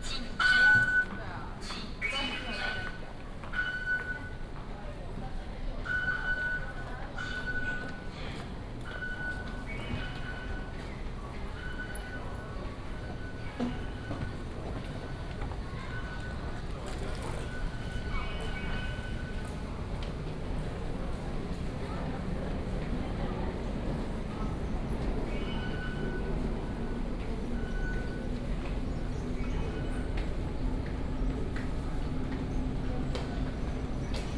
Xinyi Anhe Station, 大安區, Taipei City - walking into the MRT station
walking into the MRT station, Traffic Sound
30 July, 18:04